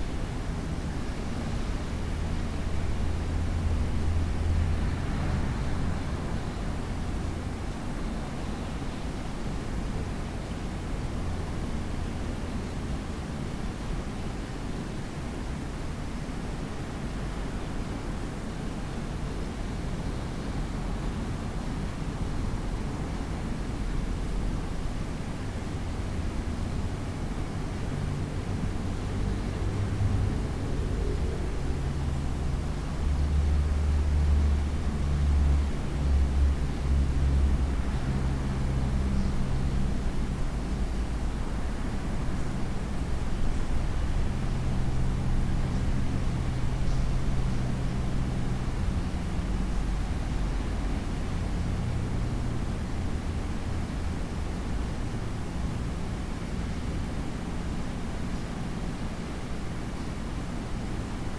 rainy day at Drill Hall, Portland, Dorset - remembering Joe Stevens
I had a lovely conversation with Hannah Sofaer who remembers Joe from his creative conversations radio broadcasts; we spoke about Joe and about my interest in retracing his steps. She thought I should make a recording explaining some of this and so I did try. I probably should have had the mic a bit closer to me, but the hall is so amazing and I wanted to capture more of the resonance of the space, the traffic outside. I hope you can still hear me trying to explain myself.